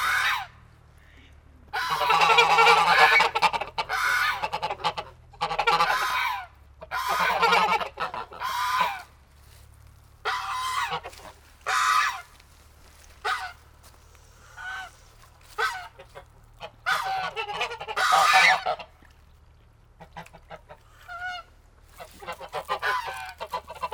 Geese are shouting when seeing us. This makes children scream too. The white goose is particularly painful ! Yeerk !